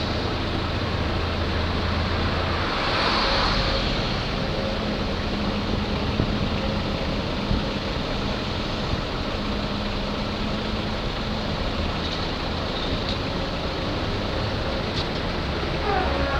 Boleslawa Krzywoustego, Szczecin, Poland
At the tram stop.